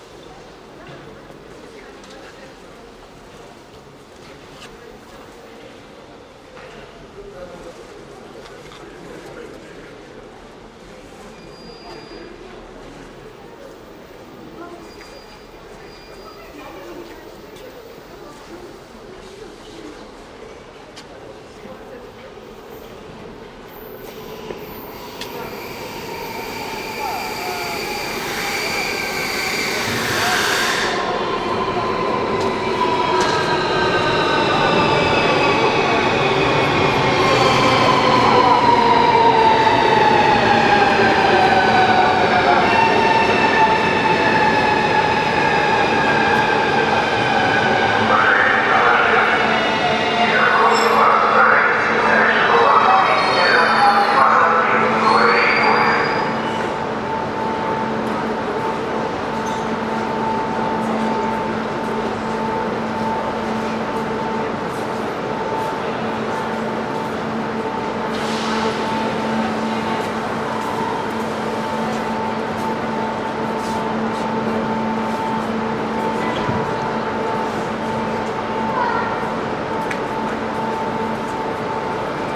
The station of the MCC "Ploshad Gagarina". You can hear people talking on the platform, the train pulls up, announces its arrival, the doors open, people are actively walking, then the doors close and the train leaves. You can hear people talking again. Covered platform.
Центральный федеральный округ, Россия